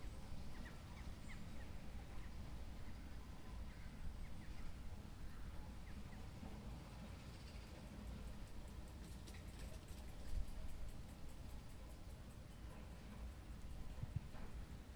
Park Side, Checkendon - Listening on the bench next to the carpark
Listening to Checkendon Exiles v Wheatley King and Queen in the Upper Thames Valley Sunday League match. I arrived a few minutes before half-time. This recording was made from the bench next to the car park. I made the recording with a Tascam DR-40.
Reading, UK, 21 October 2018